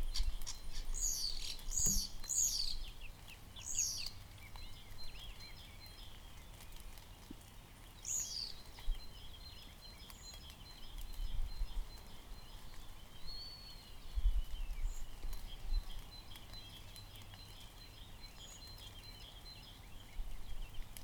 Ellend, Magyarország - Waterdrops from willows with cars passing
listening to waterdrops falling from willow trees in the morning, while two cars are passing by.
Hungary, 8 April 2014